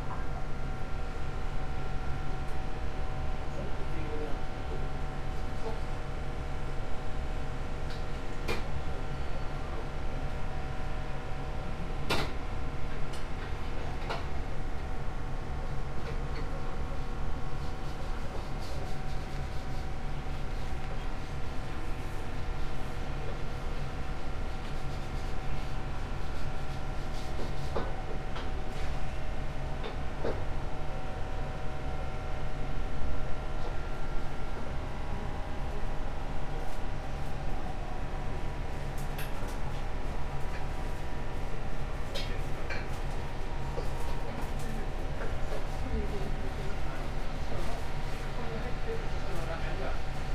chome asakusa, tokyo - noodle soup restaurant
noodle soup in process of preparation